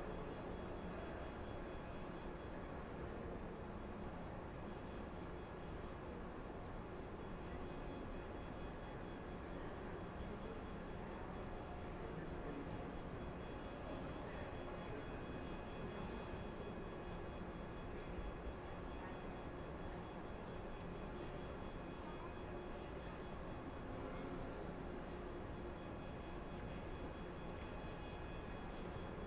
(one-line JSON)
{"title": "Flushing Meadows Corona Park, Queens, NY, USA - Panorama Of The City Of New York 2", "date": "2017-03-04 14:50:00", "description": "Standing under the flight path of a model plane landing and taking off from a model LaGuardia Airport in the Panorama of The City of New York Exhibit in The Queens Museum", "latitude": "40.75", "longitude": "-73.85", "altitude": "7", "timezone": "America/New_York"}